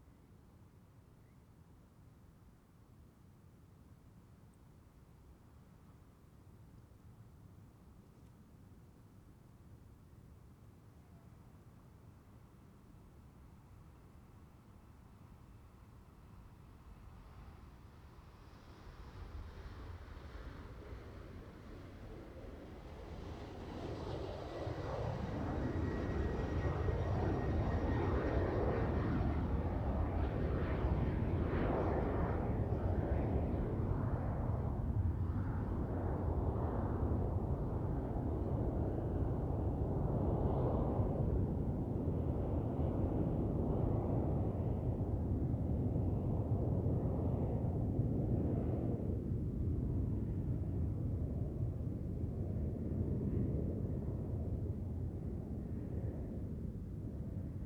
El Prat de Llobregat, Espagne - Llobregat - Barcelone - Espagne - Entre la plage et la piste de décollage.
Llobregat - Barcelone - Espagne
Entre la plage et la piste de décollage.
Ambiance.
ZOOM F3 + AKG C451B